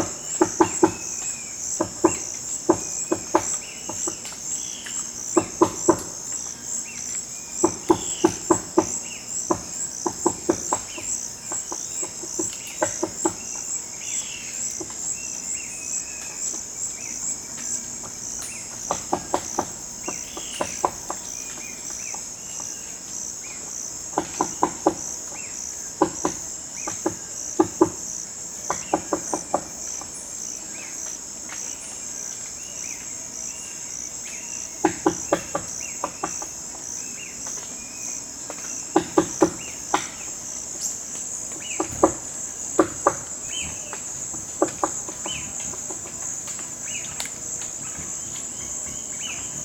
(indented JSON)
{
  "title": "Tauary (Amazonian Rainforest) - Woodpecker in the amazonian rainforest",
  "date": "2017-09-09 08:55:00",
  "description": "A small woodpecker in the morning in the forest close to Tauary (Part of the FLONA of Tefé).",
  "latitude": "-3.63",
  "longitude": "-64.93",
  "altitude": "66",
  "timezone": "America/Manaus"
}